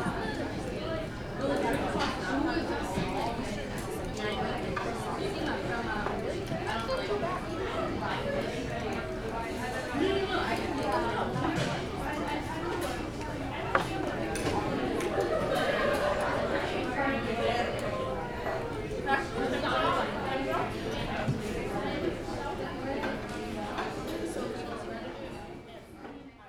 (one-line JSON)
{"title": "Founders Commons, Post Rd, Oakland, CA, USA - Supper at Founders Commons", "date": "2018-10-09 18:39:00", "description": "I use Zoom H1n to record the sound of Mills students having supper at Founders Commons which is the main dining place of Mills College. The atmosphere is good, people are relaxed.", "latitude": "37.78", "longitude": "-122.18", "altitude": "60", "timezone": "America/Los_Angeles"}